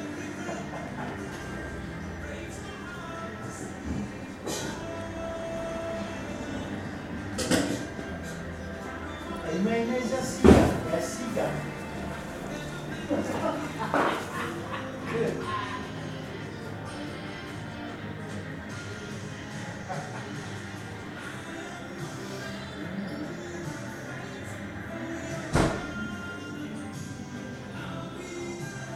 wien viii - hanslwirt
gasthaus schermer »hanslwirt«